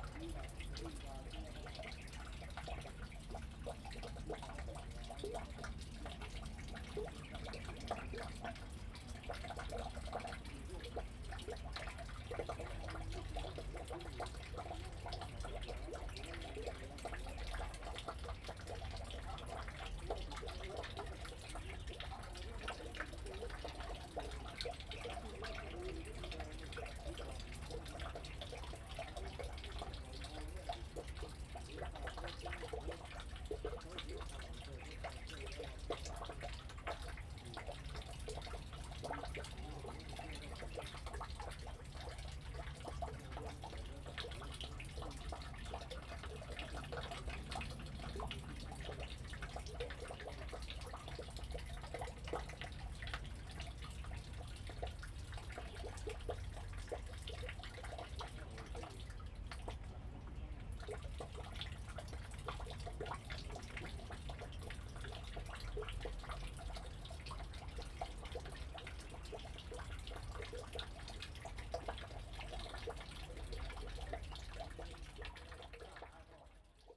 杉福漁港, Liuqiu Township - In the fishing port pier
In the fishing port pier, Traffic Sound, Birds singing
Zoom H2n MS+XY
2 November 2014, ~08:00